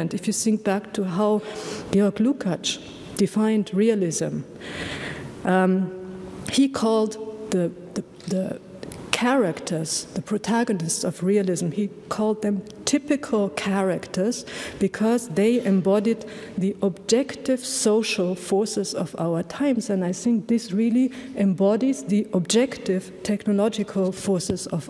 Tiergarten, Berlin, Deutschland - steyerle&lucas
Berlin, Germany, 6 February 2016